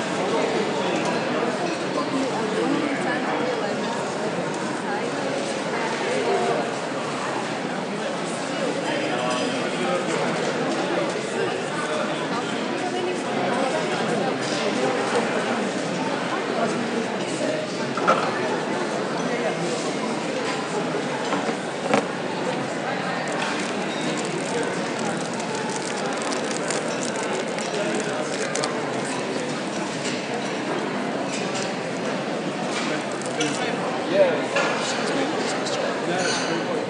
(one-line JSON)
{"title": "British Library Cafe - Can We Steal Your Chair?", "date": "2013-02-16 13:16:00", "description": "At lunchtime during the In The Field symposium at the British Library I sat in the library cafe eating my ham and egg sandwich. Within seconds of pressing record a lady approached me and asked \"Can we steal your chair\". An interesting collection of words - 'we' as in not just her and 'steal' as in taking something that belongs to someone else.\nRecorded on iPhone 5 with 'iSaidWhat?!' App. Trimmed and saved in Audacity.", "latitude": "51.53", "longitude": "-0.13", "altitude": "34", "timezone": "Europe/London"}